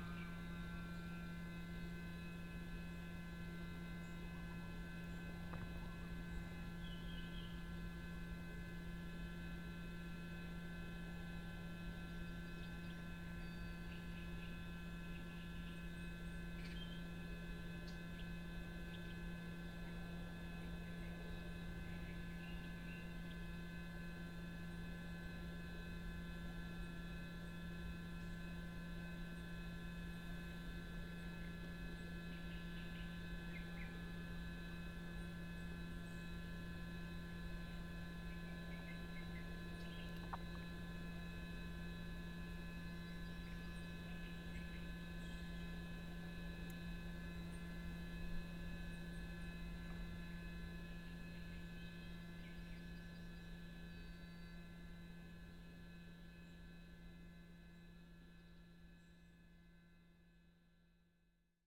Borne Sulinowo, Polska - Sewage treatment plant @ Borne Sulinowo
sound recorded at the gate to sewage treatment plant @ borne Sulinowo. Binaural records